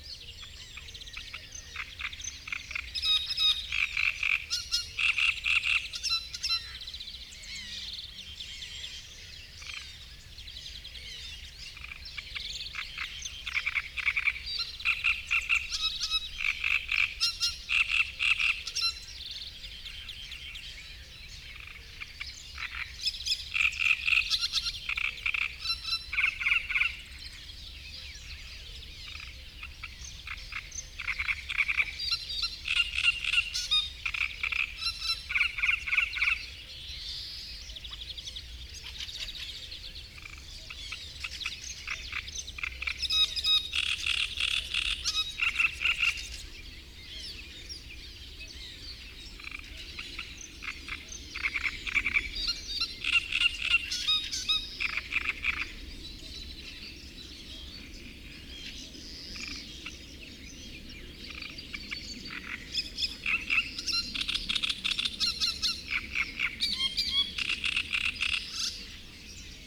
Gmina Tykocin, Poland - great reed warbler and marsh frogs soundscape ...
Kiermusy ... great reed warbler singing ... frog chorus ... sort of ... open lavalier mics either side of a furry table tennis bat used as a baffle ... warm misty morning ... raging thunderstorm the previous evening ...